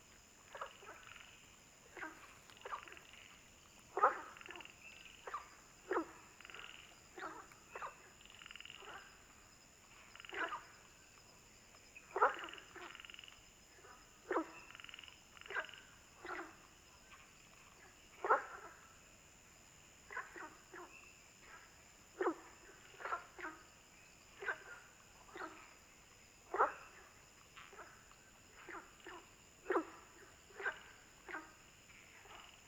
三角崙, 魚池鄉五城村, Taiwan - Frogs chirping
Ecological pool, In the pool, Frogs chirping, Bird sounds, Firefly habitat area
Zoom H2n MS+XY
Puli Township, 華龍巷164號, 19 April